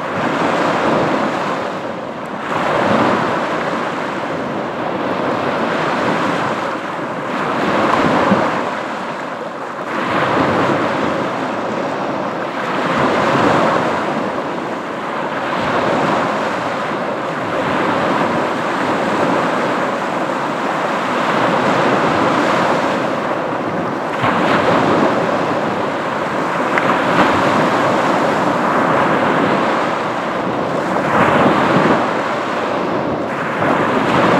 Wyspa Sobieszewska, Gdańsk, Poland - Morze ranek
Morze ranek rec. Rafał Kołacki
9 July 2015, 04:13